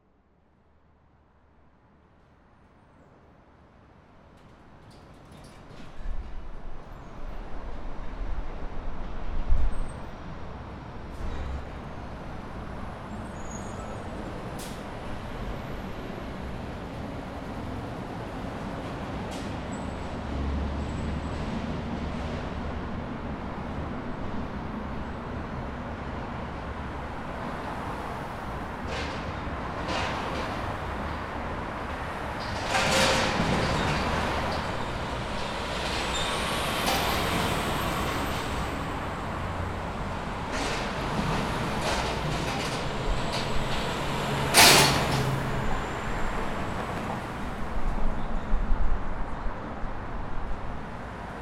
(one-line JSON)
{"title": "Remington, Baltimore, MD, USA - Under I83", "date": "2016-09-12 13:03:00", "description": "Recorded walking on North ave under I83", "latitude": "39.31", "longitude": "-76.62", "altitude": "34", "timezone": "America/New_York"}